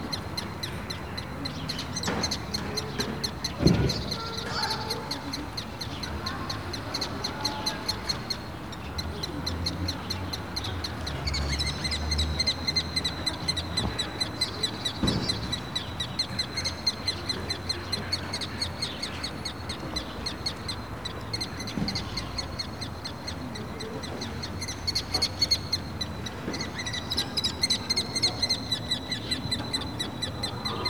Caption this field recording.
a bunch of excited birds swarming on the top of a residential building.